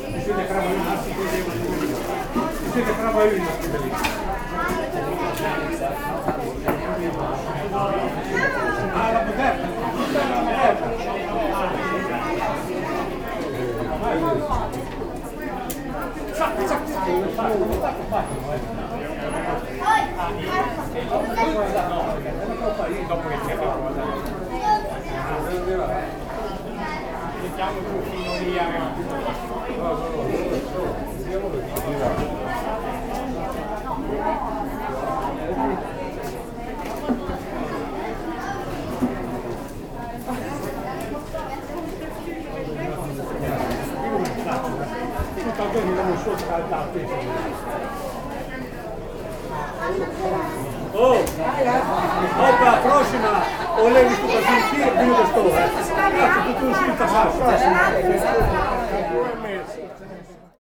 alto, fiesta sagra patata
village fiesta dedicated to the potato, here playback music and voices at the bar
soundmap international: social ambiences/ listen to the people in & outdoor topographic field recordings